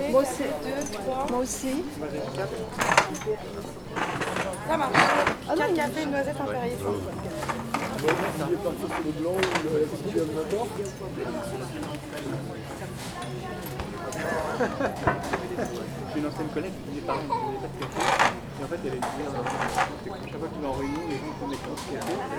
Place Victor Hugo, Saint-Denis, France - Outside Cafe Le Khédive
This recording is one of a series of recording, mapping the changing soundscape around St Denis (Recorded with the on-board microphones of a Tascam DR-40).